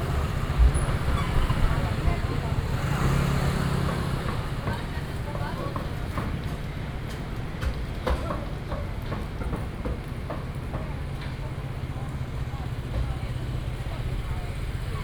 walking in the Old traditional market, traffic sound
Taoyuan District, Taoyuan City, Taiwan, 27 June 2017